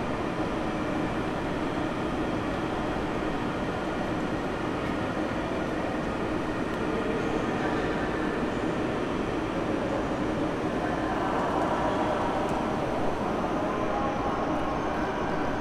Frankfurt (Main) Hauptbahnhof, Gleis - 3. April 2020, Gleis 9
The recording starts with a walk through a tunnel that connects the platforms. A man whistles to signal that I should move aside for the proper physical distance. He stresses his whistle with a gesture. On platform 9 a train is leaving. Nearly noone boarded. A train to Kiel is announced that I took since November several times at that day. I never made a recording. But the train was always packed. A lot of people were leaving, even more boarding. Today I saw perhaps ten people leaving the train, 15 people boarding, all rather young. The doors of the train are beeping as if this could help to get customers. An anouncement is made that people should take a certain distance to each other. The train to Kiel is leaving with a short delay. The sound of the engine is quite different from older ICEs. A walk through the main hall to a book shop marks the end of this recording.